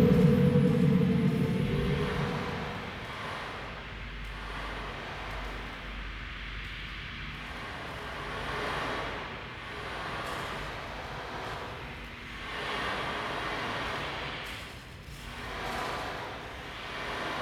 Nördliche Innenstadt, Halle (Saale), Deutschland - move on
Halle (Saale), Germany, 18 October 2015